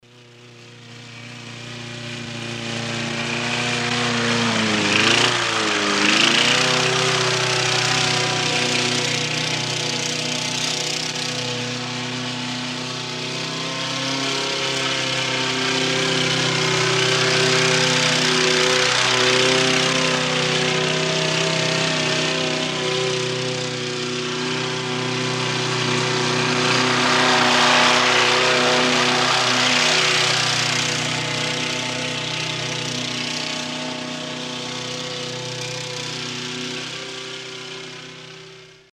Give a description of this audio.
traktor beim furchenziehen, mittags im frühjahr 07, project: :resonanzen - neanderland - soundmap nrw: social ambiences/ listen to the people - in & outdoor nearfield recordings, listen to the people